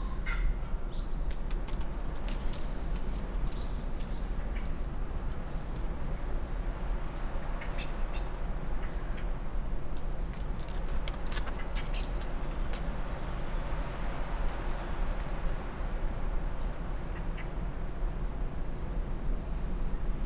Off Main Street, Helperthorpe, Malton, UK - churchyard soundscape ...
church yard soundscape ... SASS ... bird calls from ... blackbird ... crow ... robin ... wren ... pheasant ... great tit ... tree sparrow ... long-tailed tit ... coal tit ... collared dove ... chaffinch ... wood pigeon ... treecreeper ... background noise ... dry leaves blown around ...
England, United Kingdom, December 2019